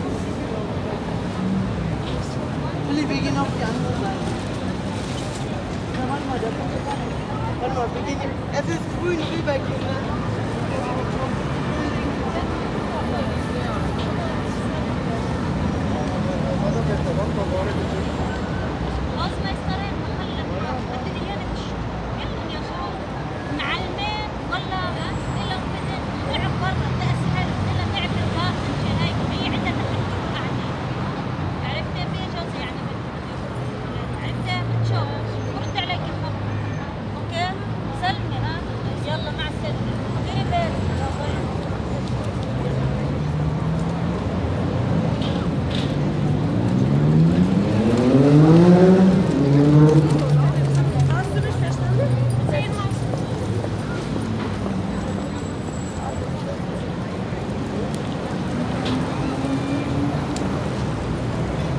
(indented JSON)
{
  "title": "Neukölln, Berlin, Deutschland - Berlin. Hermannplatz",
  "date": "2012-04-27 18:30:00",
  "description": "Standort: Nördliches Ende des Hermannplatzes (Sonnenallee). Blick Richtung Nordwest.\nKurzbeschreibung: Passantengespräche, dichter Verkehr, Musik aus Autoradios, Trillerpfeife eines politischen Aktivisten auf dem Fahrrad.\nField Recording für die Publikation von Gerhard Paul, Ralph Schock (Hg.) (2013): Sound des Jahrhunderts. Geräusche, Töne, Stimmen - 1889 bis heute (Buch, DVD). Bonn: Bundeszentrale für politische Bildung. ISBN: 978-3-8389-7096-7",
  "latitude": "52.49",
  "longitude": "13.43",
  "timezone": "Europe/Berlin"
}